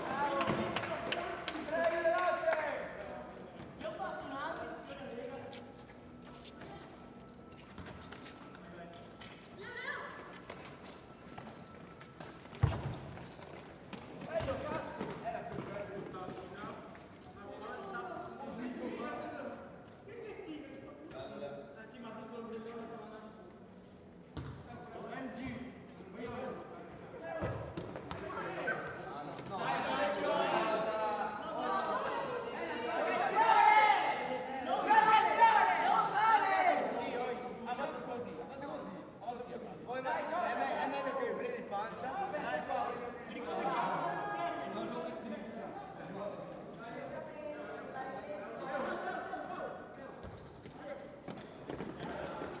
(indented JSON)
{
  "title": "Campiello Pisani, Venezia VE, Italy - Campiello Pisani",
  "date": "2022-09-17 14:00:00",
  "description": "Kids playing football in the campo outside the conservatory of Venice.\nTascam portable recorder + Soundman OKM",
  "latitude": "45.43",
  "longitude": "12.33",
  "altitude": "9",
  "timezone": "Europe/Rome"
}